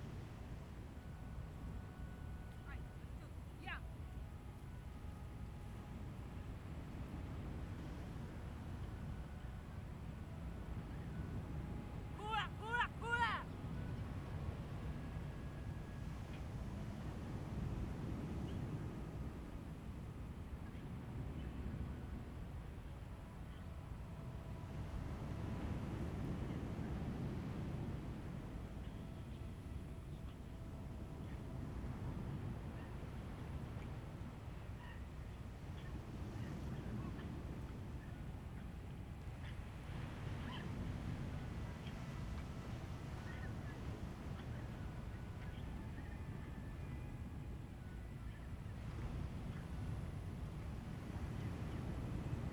Waterfront Park, Beach at night, The sound of aircraft flying
Zoom H2n MS + XY